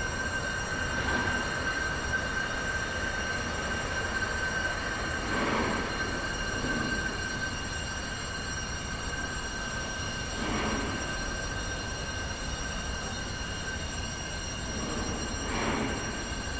Place des Hauts-Fourneaux
Cockerill-Sambre, Ougrée, blast furnace, pelleting plant, diesel locomotive. Zoom H2 and OKM ear mics.